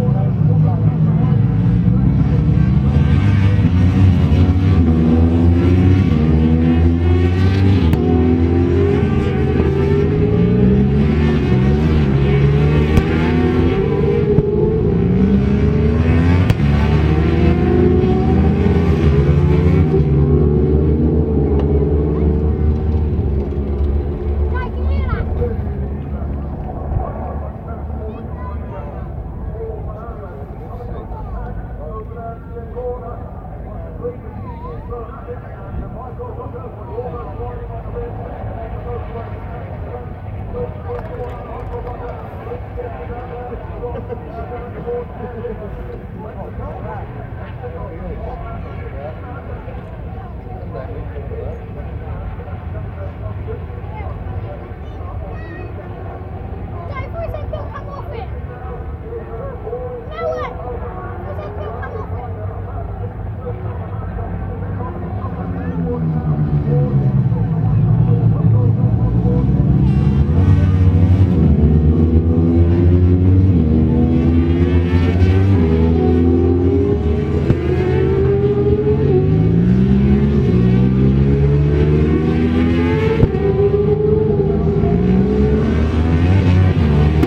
{"title": "Unit 3 Within Snetterton Circuit, W Harling Rd, Norwich, United Kingdom - BSB 2001... Superbikes ... Race 1 ...", "date": "2001-05-07 13:55:00", "description": "BSB 2001 ... Superbikes ... Race 1 ... one point stereo mic to minidisk ... commentary ...", "latitude": "52.46", "longitude": "0.95", "altitude": "41", "timezone": "Europe/London"}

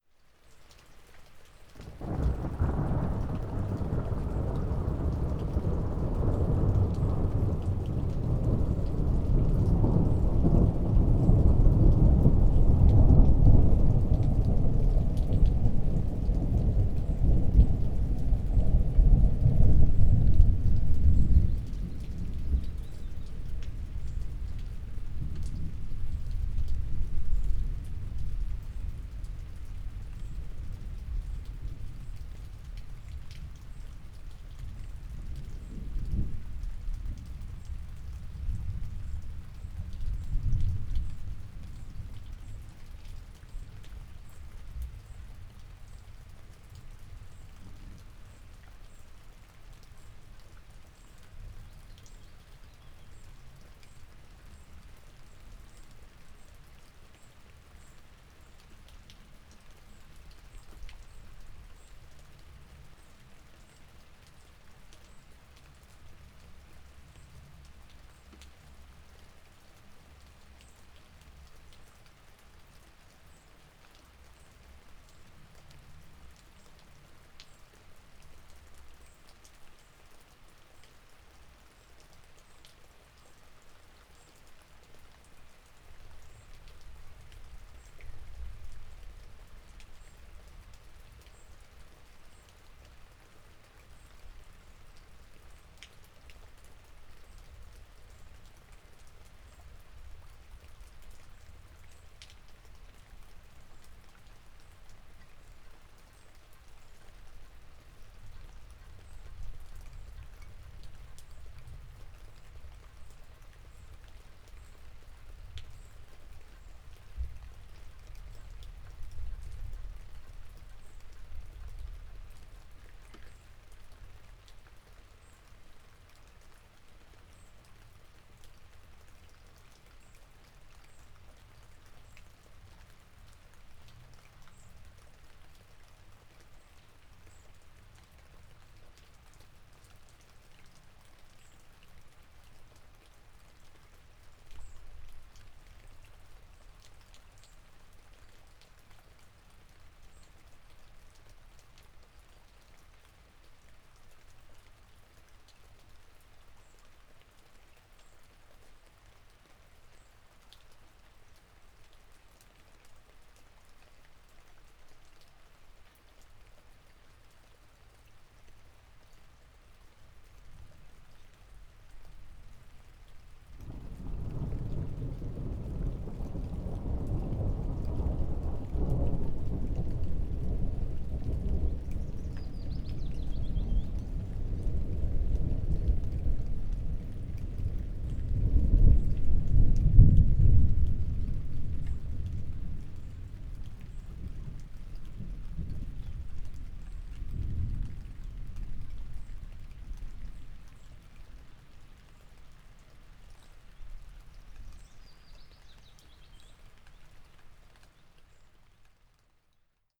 {"title": "ex Soviet military base, Vogelsang - inside building during thunderstorm", "date": "2017-06-16 16:30:00", "description": "at the open window, rain, thunder\n(SD702, MKH8020)", "latitude": "53.05", "longitude": "13.38", "altitude": "56", "timezone": "Europe/Berlin"}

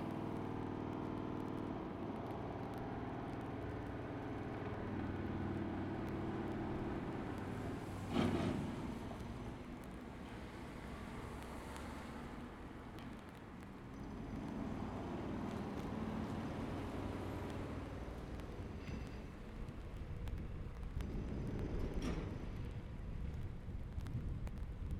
{"title": "Rue de Charlieu, Roanne, France - birds & pneumatic drill", "date": "2019-03-20 15:00:00", "description": "children from the Matel School went field-recording on the afternoon, and this is what they came up with.\nmerci pour tout et bonnes vacances les enfants !", "latitude": "46.05", "longitude": "4.08", "altitude": "278", "timezone": "GMT+1"}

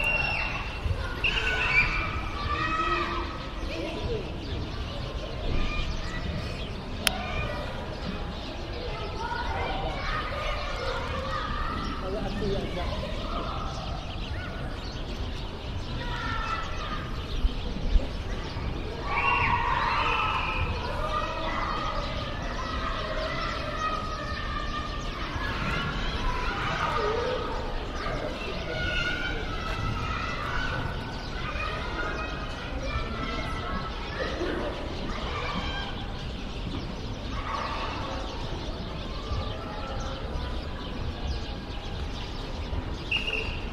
{"title": "jerusalem - enfants jouant au basket dans une cour d'ecole", "date": "2011-11-11 11:55:00", "latitude": "31.78", "longitude": "35.23", "altitude": "758", "timezone": "Asia/Hebron"}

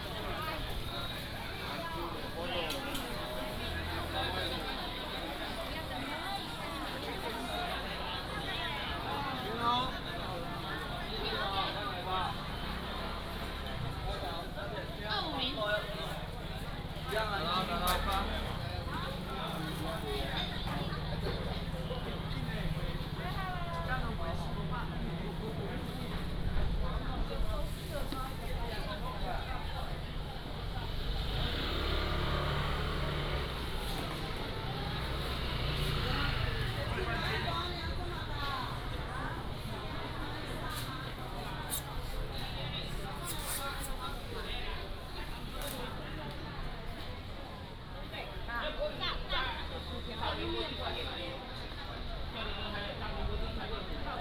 {"title": "Bo’ai St., Miaoli City - Walking in the Street", "date": "2017-02-16 08:56:00", "description": "Walking in the traditional market, Market selling sound, motorcycle, sound of birds", "latitude": "24.55", "longitude": "120.82", "altitude": "64", "timezone": "Asia/Taipei"}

